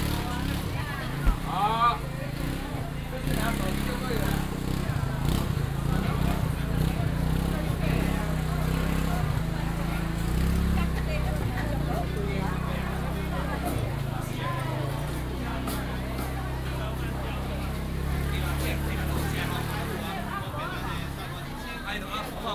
豐原公有零售市場, Fengyuan Dist., Taichung City - Walking in the market
Very large indoor market, Walking in the market
Taichung City, Taiwan, 22 January 2017, 11:50